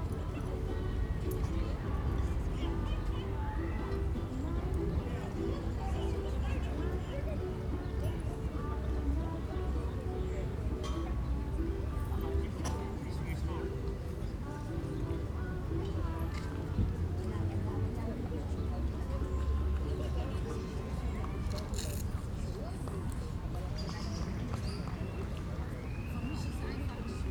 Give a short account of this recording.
saturday evening ambience at urbahnhafen, landwehrkanal, berlin